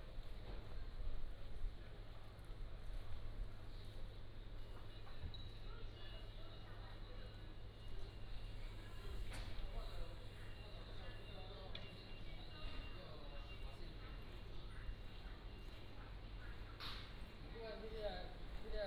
Walking in the alley, Traffic Sound, Small towns